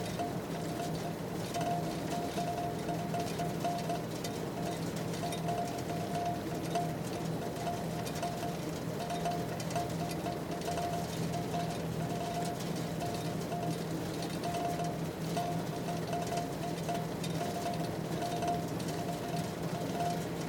This is the sound of wool being prepared for wool-spinning at the Natural Fibre Company. Unlike the worsted-spun yarns, wool-spun yarns are prepared by being carded before being spun. At the end of the massive carding machine, the wool is divided into small sections and then rubbed between boards to produce fine tops. These tops will then be spun and plied to create lovely, bouncy, woollen-spun yarns.